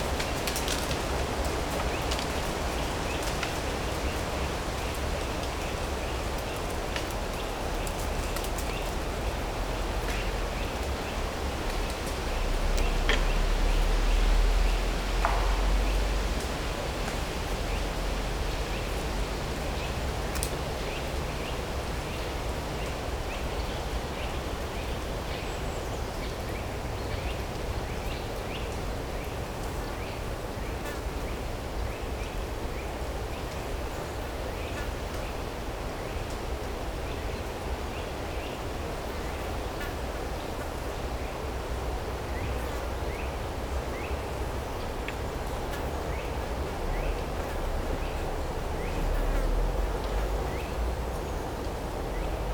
Morasko nature reserve - autumn in the forest ambience
another spot in Morasko Nature reserve. trees and wind make a mesmerizing sound in the forest. stronger gusts bring down leaves and acorns, breaking branches. some traffic from the nearby road (roland r-07 internal mics)
Poznań, Poland, 12 September 2018, 12:39